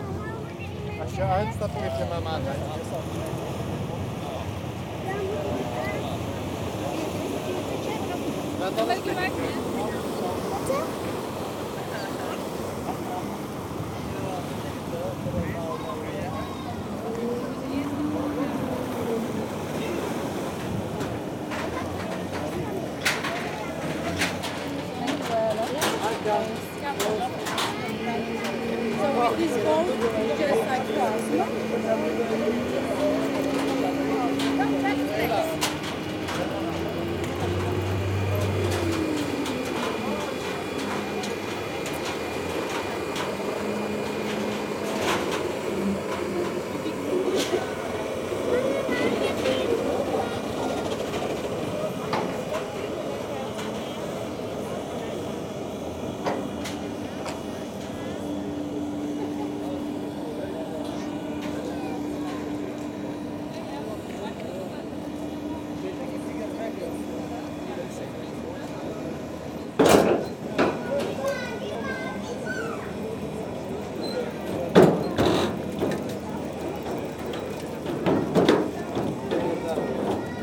Antwerpen, Belgique - Linkeroever ferry
The Linkeroever ferry, crossing the Schelde river. The boat is arriving, people go out and in essentially with bicycles, and the boat is leaving.
Antwerpen, Belgium, 4 August 2018, 14:45